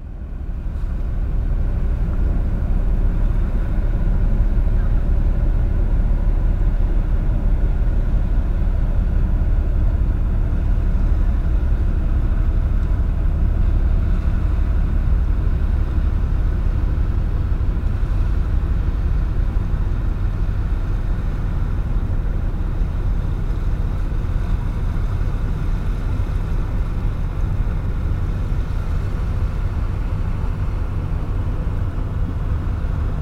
ooij, rhine, stone polder
On a stone polder at the river rhine - which is here called - de wal. The sound of the ships passing by and the water gurgle in between the stones. In the distance the waves on the nearby sand beach on a fresh mild windy day in fall.
international ambiences and topographic field recordings